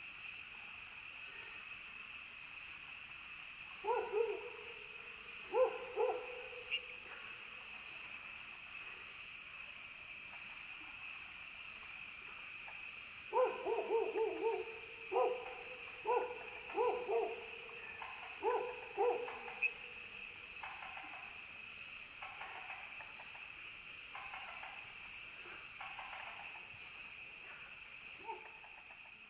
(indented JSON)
{
  "title": "三角崙, Yuchi Township, Nantou County - Night in the woods",
  "date": "2016-04-19 19:21:00",
  "description": "Frogs chirping, Sound of insects, Dogs barking\nZoom H2n MS+XY",
  "latitude": "23.93",
  "longitude": "120.90",
  "altitude": "767",
  "timezone": "Asia/Taipei"
}